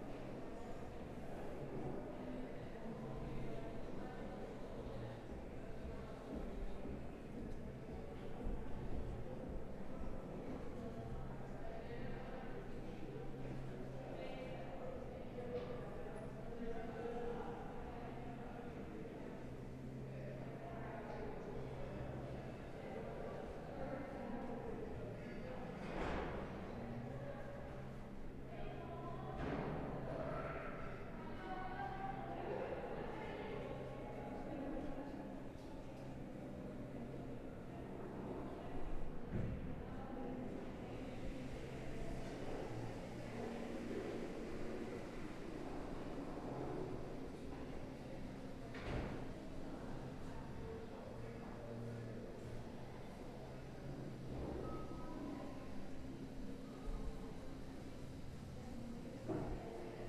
Gerrit Rietveld Academie - Change of Anouncement
During the day the intercom made an anouncement that was rather unusual.